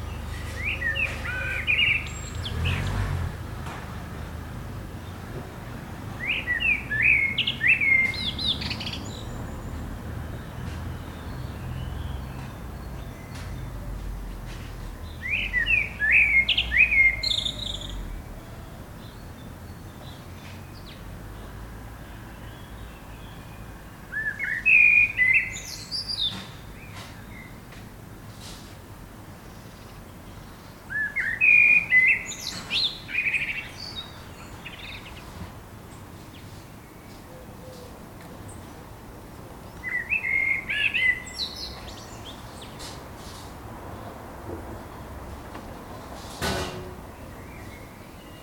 Chem. Maurice, Toulouse, France - Chemin Maurice
quiet street in a pleasant residential area.
Captation : ZOOMh4n
France métropolitaine, France, 28 April 2022, 12:30